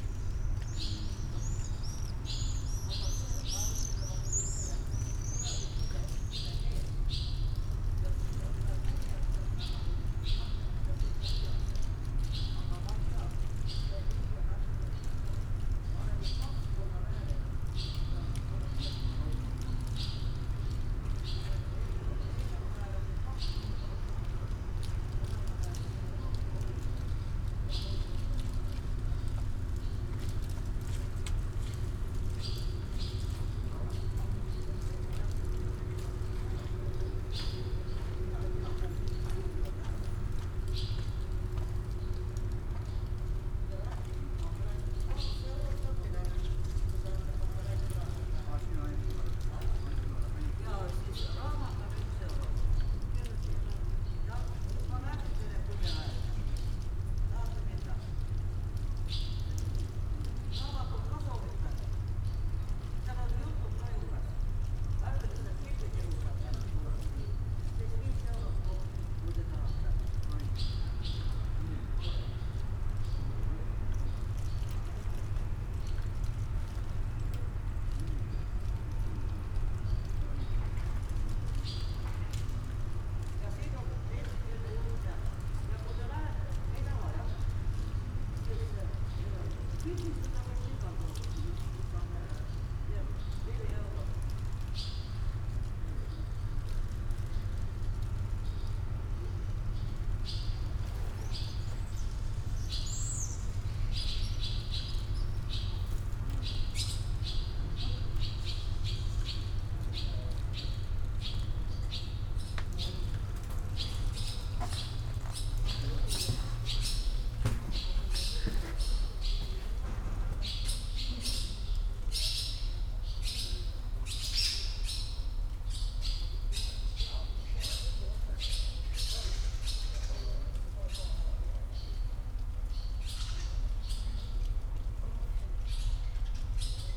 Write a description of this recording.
tallinn, former prison patarei, inner yard, swallows